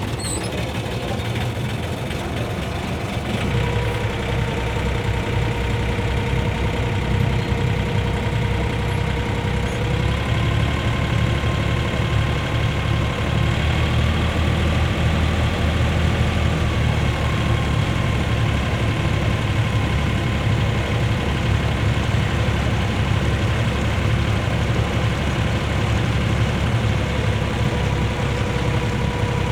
Studland, Dorset, UK - Poole Yacht Club Launch
Boarding the PYC Launch to ferry passengers to swinging moorings. Recorded on a Fostex FR-2LE Field Memory Recorder using a Audio Technica AT815ST and Rycote Softie.